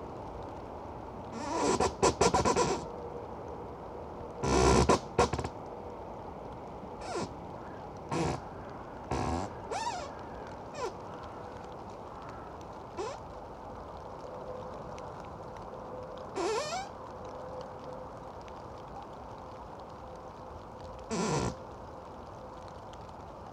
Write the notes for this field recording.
singing tree in a wind. the first part is recorded with small omni mics, the second part with LOM geophone